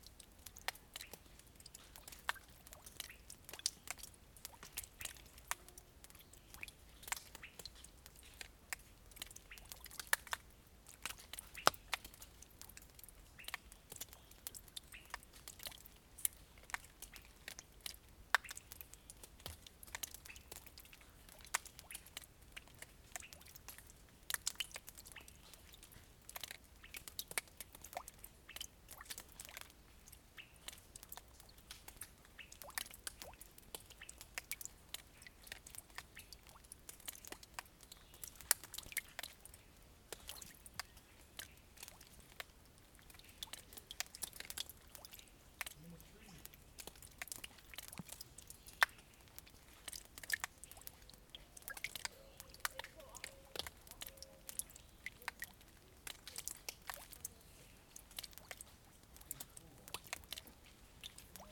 Small Shelter Cave, Don Robinson State Park, Cedar Hill, Missouri, USA - Don Robinson Small Cave Shelter
Recording within a small cave shelter in Don Robinson State Park. St. Louis entrepreneur Don Robinson, creator of Jyro Cola and Off stain remover, bequeathed his land in what was once remote Jeffco to the Missouri state park system. His bachelor hillbilly hideaway contained a cement pond where he would entertain weekend guests surrounded by stone arches rescued from the former Gaslight Square entertainment district of St. Louis. In an underground bunker with views into the swimming pool, he produced his soft drink and stain remover. The bunker, pool and arches have been replaced by a scenic overlook. His 100 year old stone house still stands, though I suspect it will likely succumb to becoming a rental facility, hosting weddings, with the beautiful LaBarque Hills serving as the photogenic background to the festivities. A nausea-inducing roller coaster of a ride will get you and your passengers to the park in Byrnesville.
Missouri, United States, May 2021